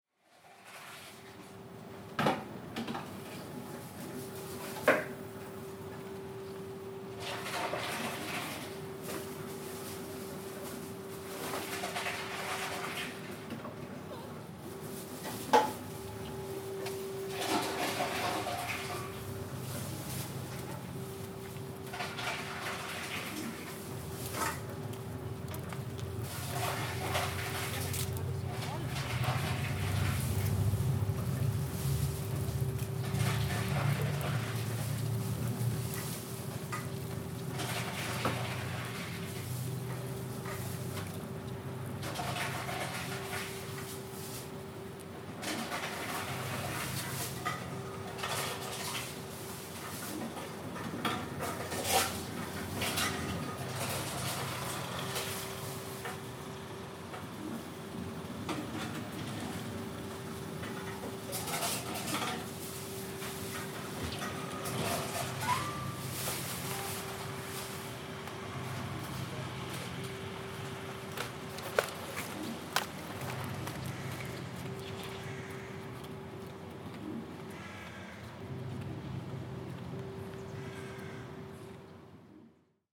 Recordist: Raimonda Diskaitė
Description: Inside a bottle recycling station. Recycling sounds and electronic checking sound. Recorded with ZOOM H2N Handy Recorder.